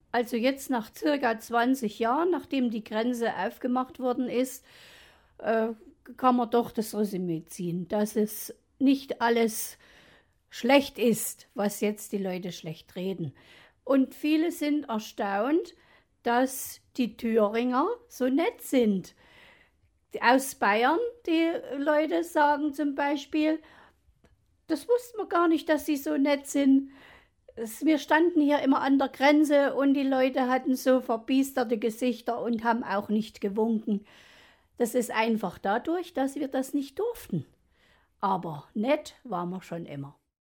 Produktion: Deutschlandradio Kultur/Norddeutscher Rundfunk 2009
18 August 2009, 17:17